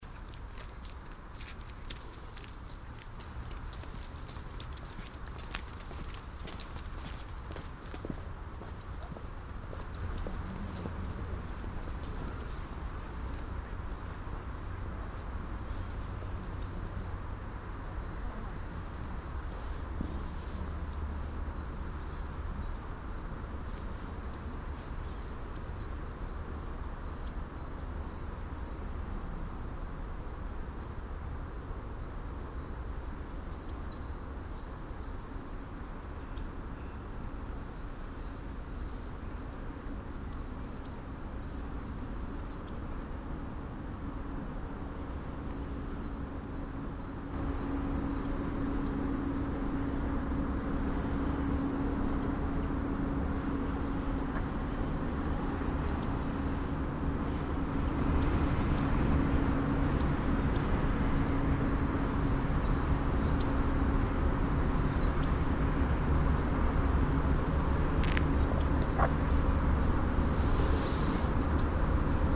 wülfrath, schlupkothen - wuelfrath, schlupkothen
aufnahme auf dem weg um das naturschutzgebiet - hundeauslaufstrecke
project: : resonanzen - neanderland - social ambiences/ listen to the people - in & outdoor nearfield recordings